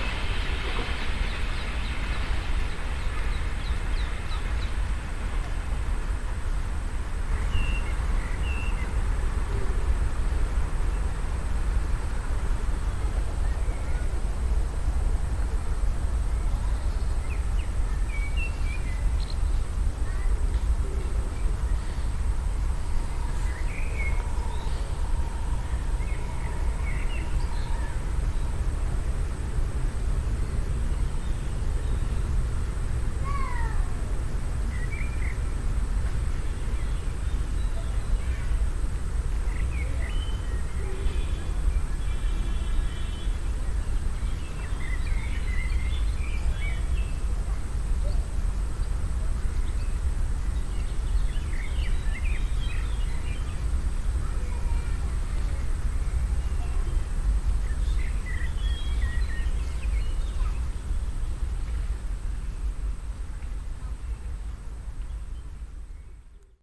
cologne, stadtgarten, soundmap, kiesweg nahe bahndamm
stereofeldaufnahmen im september 07 mittags
project: klang raum garten/ sound in public spaces - in & outdoor nearfield recordings